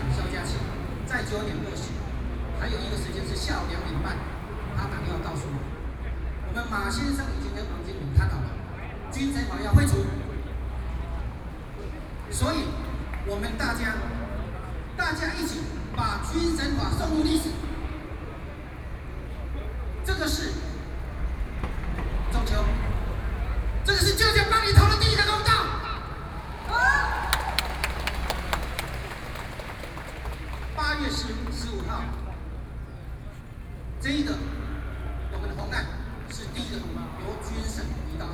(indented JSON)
{
  "title": "Linsen S. Rd., Taipei City - Protest Speech",
  "date": "2013-10-10 10:48:00",
  "description": "Processions and meetings, Binaural recordings, Sony PCM D50 + Soundman OKM II",
  "latitude": "25.04",
  "longitude": "121.52",
  "altitude": "12",
  "timezone": "Asia/Taipei"
}